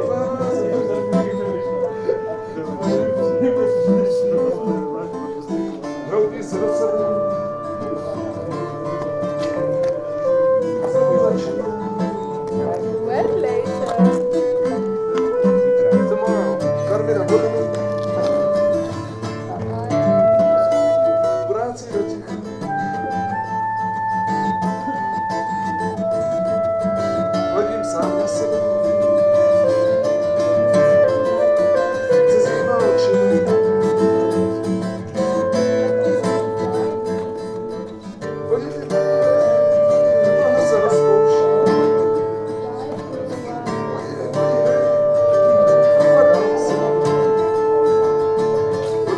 vernisage on Ukradená galerie calm deep autumn midnight - vernisage on Ukradená galerie calm deep autumn midnight
Every last Sunday of the month in the midnight there is an opening in Stolen gallery in Český Krumlov